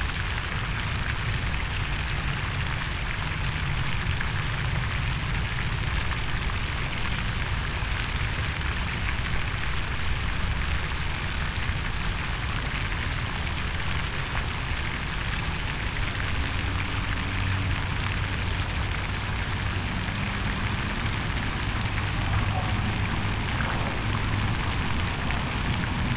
This is a pond with a fountain located in the Smyrna Market Village which is frequented by water fowl. It's near a road and sidewalk, so you can hear traffic sounds and bikes. There was a visitor sitting in the swing bench on the left while I was taking the recording.
Recorded with Tascam Dr-100mkiii with dead cat wind screen.
Georgia, United States